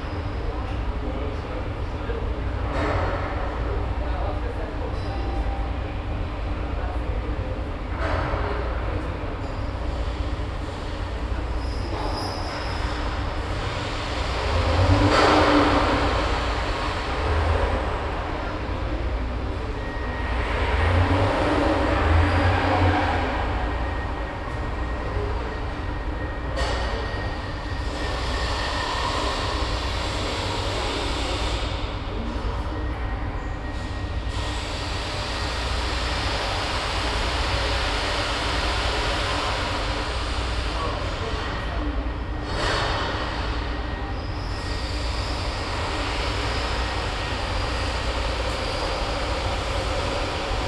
Kirkwall, Orkney Islands, UK - Stairs To Cargo Deck, Lerwick to Aberdeen Ferry, Kirkwall Stopover
Sailing from the Shetland Islands to Aberdeen, the ferry stops at Kirkwall on the Orkneys in the middle of the night. Standing at the top of the stairs down to the cargo deck.
Soundman OKMII/ Olympus LS11
Orkney, Scotland, United Kingdom, 24 August 2012, 00:30